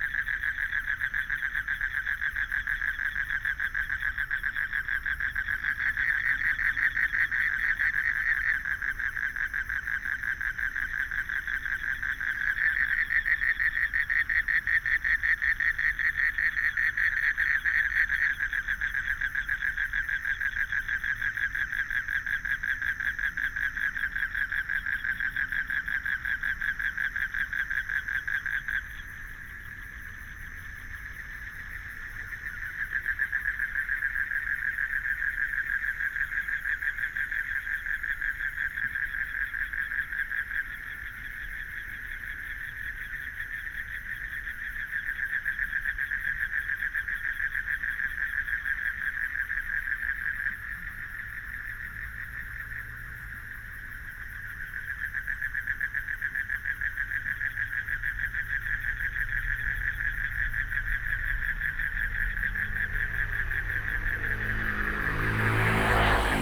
關渡里, Beitou District - Frogs sound
Frogs sound, Traffic Sound, Environmental Noise
Binaural recordings
Sony PCM D100+ Soundman OKM II SoundMap20140318-4)